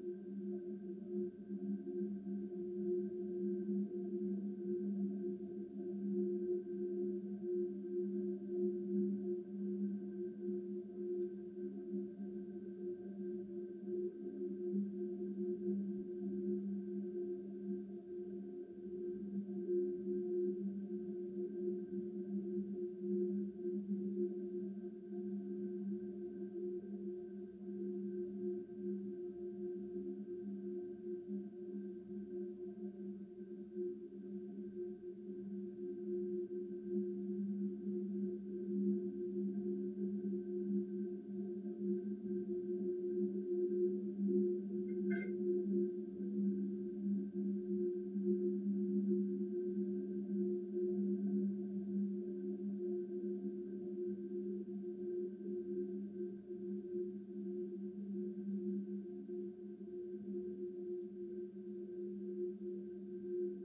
Klondike Park Pipe, Augusta, Missouri, USA - Klondike Park Pipe
Recording of the drone from the Labadie Energy Center power plant captured by contact mics attached to the coupling on the end of a 3 foot in diameter steel pipe abandoned in the woods in Klondike Park.
11 December, ~11:00